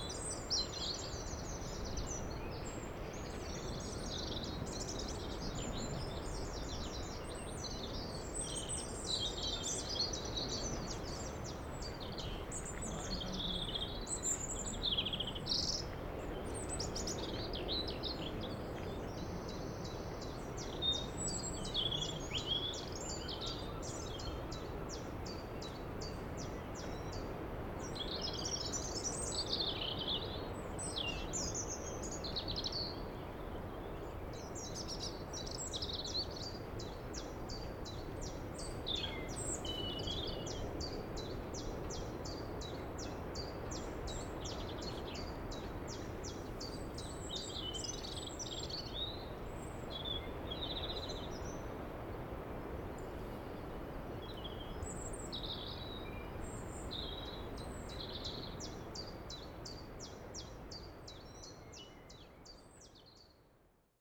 Des oiseaux et la mer entendu depuis l'autre versant de la presqu'île.
Birds and the sea heard from the other side of the peninsula.
April 2019.
Bretagne, France métropolitaine, France, 22 April